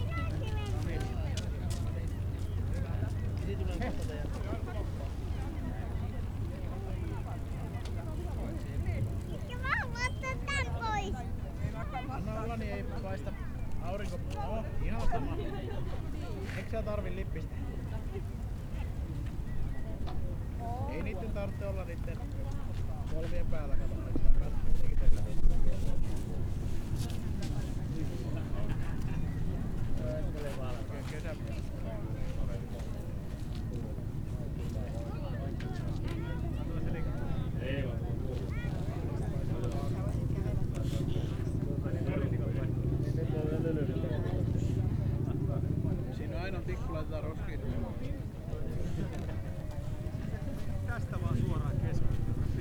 People hanging around a ice cream stand at Nallikari beach during the first proper summer weekend of 2020. Zoom H5 with default X/Y module.
Manner-Suomi, Suomi